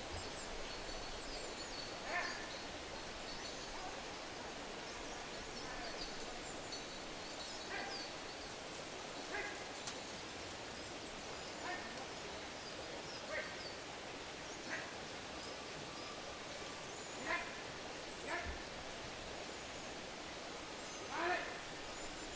Romania - Early morning with cows
Recording made while sitting in the tent, early morning one summer, a herder and his cows pass by. Made with a SHURE MV 88.
July 2017